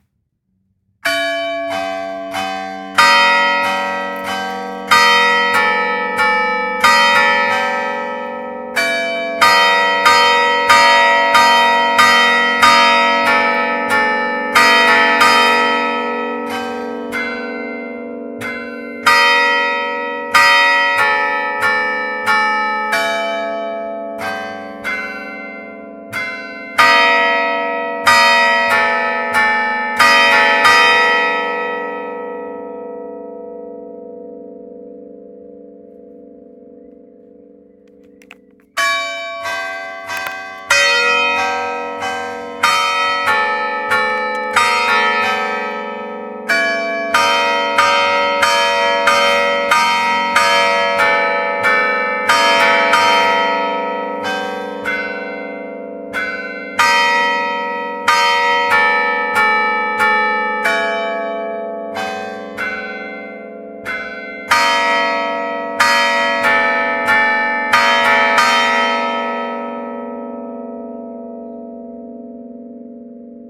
Via porta vecchia - Church bells of Spoccia
In the morning at 7 am and in the evening at 8 pm, the bells of the church in the little place of Spoccia play a distinct melody. Once in a while it got even changed.
Recorded directly near the church with EdirolR-09HR
PIE, Italia, 25 June, 20:01